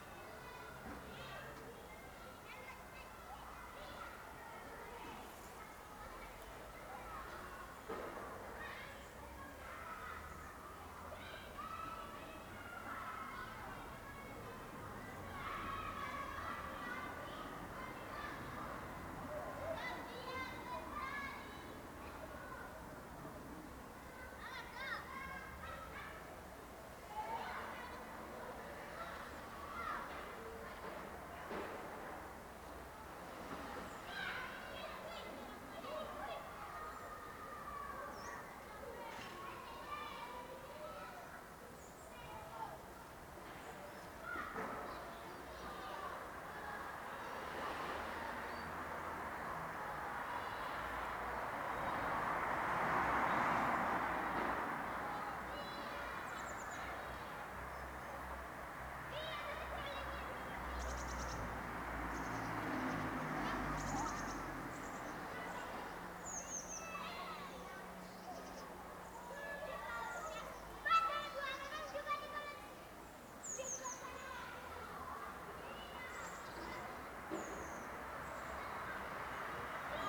children playing in a closeby schoolyard.
8 November, 13:03